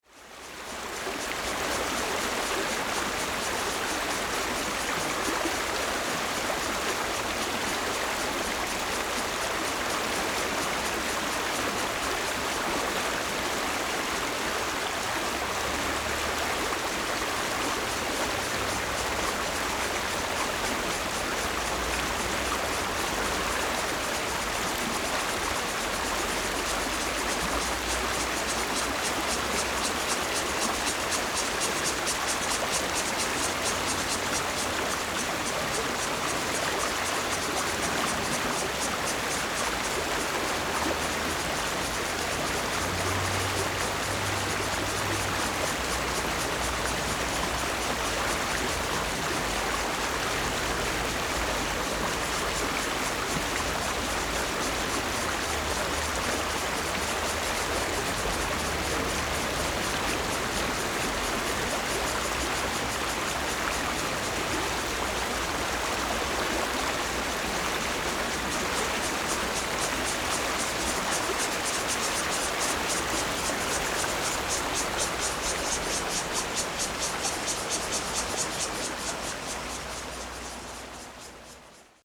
Stream, Cicada sounds, Traffic Sound
Zoom H4n+Rode NT4 ( soundmap 20120625-6)
25 June, 10:14